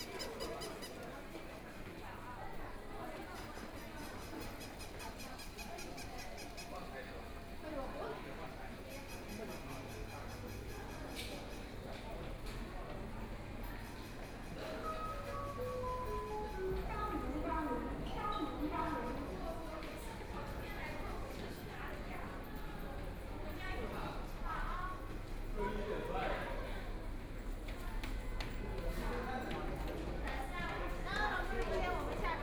Shanghai Railway Station, China - Walking in the underpass
From the subway station to the train station via the underpass, The sound of the crowd, Store noisy sound, Binaural recording, Zoom H6+ Soundman OKM II